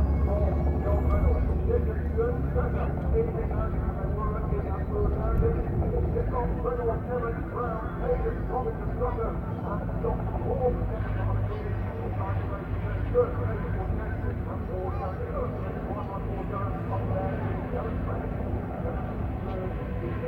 Unit 3 Within Snetterton Circuit, W Harling Rd, Norwich, United Kingdom - BSB 2001 ... Superbikes ... Race 2 ...
BSB 2001 ... Superbikes ... Race 2 ... one point stereo mic to minidisk ... commentary ...